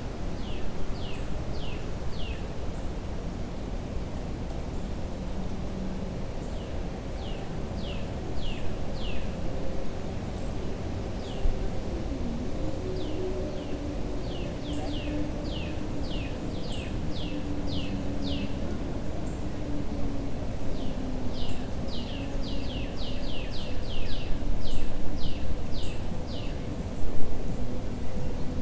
Tanyard Creek Trail, Atlanta, GA, USA - Next To A Bridge At Tanyard Creek Trail
Right next to a bridge that passes over the creek at Tanyard Creek Trail. People are traveling over the bridge on the right side, and water can be heard faintly over the sound of trees blowing in the wind. Birds and traffic create sounds in the distance. A low cut was added in post.
[Tascam Dr-100mkiii & Primo Clippy EM-272]
9 May 2021, 16:45